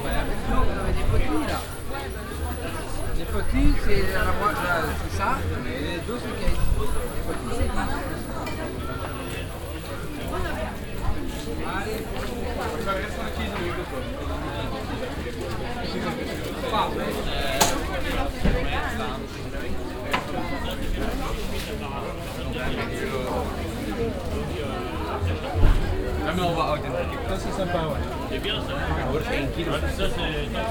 On the weekly village market. The sound of visitors passing by, plastic shopping bags and different market stalls.
international village scapes and topographic field recordings